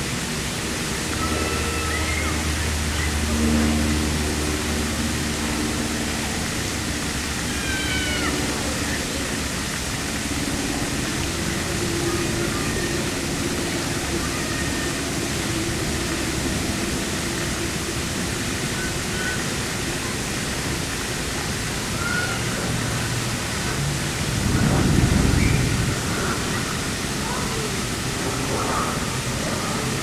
Riverside Park, Roswell, GA, USA - Riverside Park - Sprayground
A recording taken from a table across from the miniature waterpark area at Riverside Park. Lots of water sounds and children playing. Noise from the road and parking lot also bleeds over into the recording.
[Tascam DR-100mkiii w/ Primo EM-272 omni mics, 120hz low cut engaged]